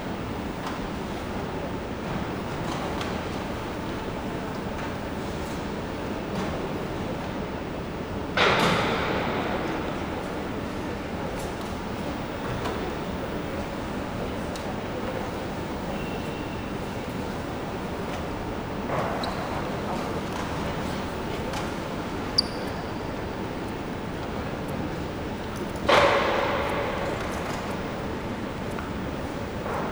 Porto, Francisco de Sá Carneiro Airport, main hall - check-in line
main hall ambience. a line of passengers waiting for their check-in. muttered conversations. a guy cleaning the tops of the check-in stalls, throwing around roof panels.
3 October, 04:40